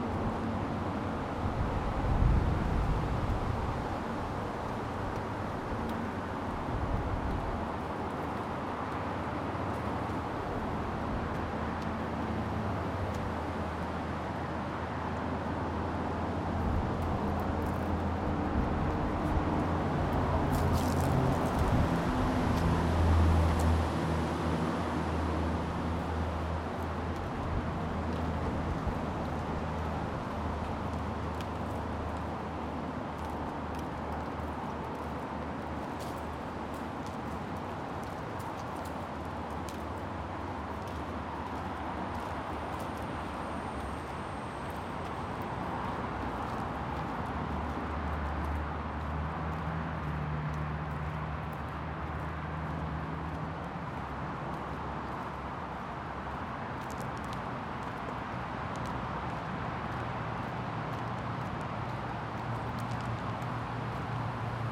{"title": "Woodland Park, Seattle WA", "date": "2010-07-18 16:15:00", "description": "Part two of soundwalk in Woodland Park for World Listening Day in Seattle Washington.", "latitude": "47.67", "longitude": "-122.35", "altitude": "79", "timezone": "America/Los_Angeles"}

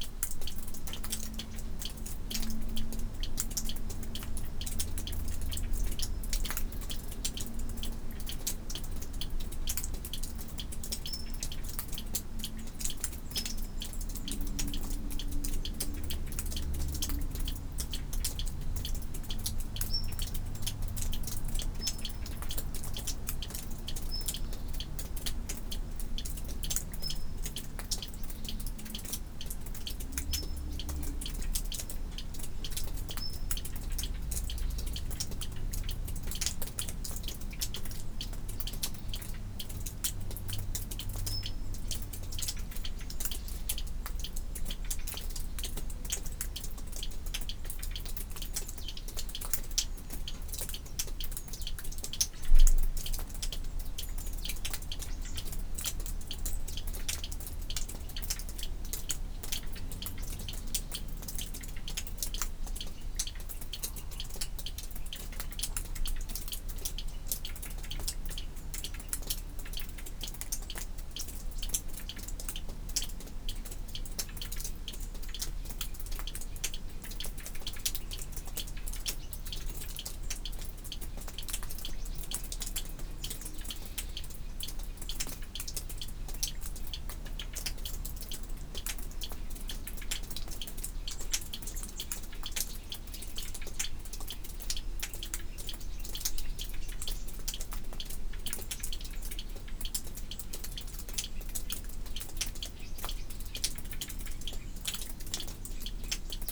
{"title": "Samak-san temple cave at dawn", "date": "2019-09-23 06:14:00", "description": "Near Samak-san temple complex...beneath a large cliff...a recess/cave...water dripping from it's roof after the continuous rains of summer, metronome like...at dawn...fog enclosed...sounds within x sounds entering from without...", "latitude": "37.84", "longitude": "127.67", "altitude": "285", "timezone": "Asia/Seoul"}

